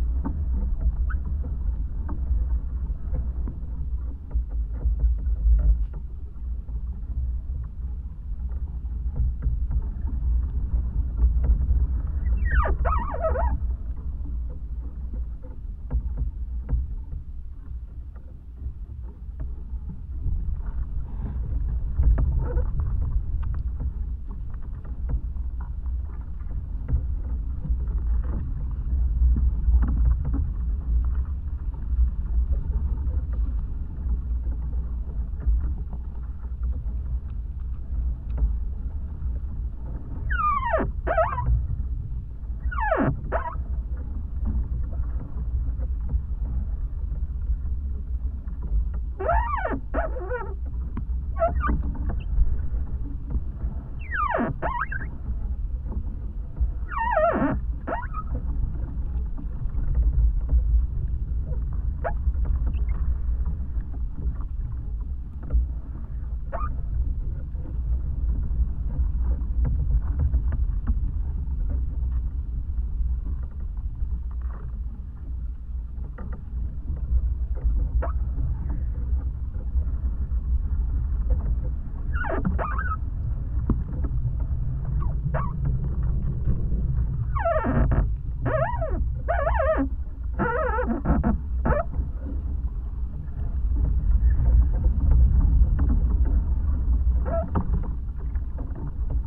Galeliai, Lithuania, wind and singing tree
Very strong wind. Contact microphones on a "singing" tree